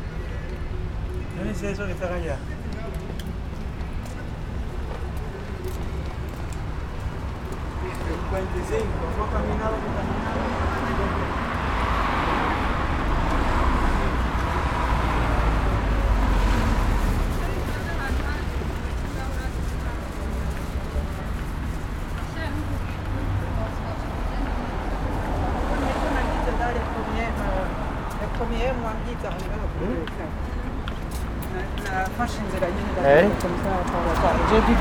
Rue du trone, Ixelles - Rue du trône
In the street, Zoom H6